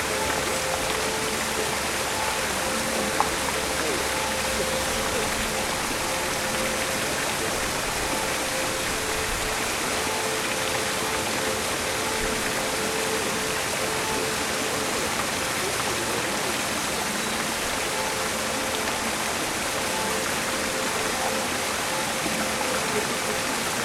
Eremitage, Bayreuth, Deutschland - grosses Basin
grosses Basin, all fountains working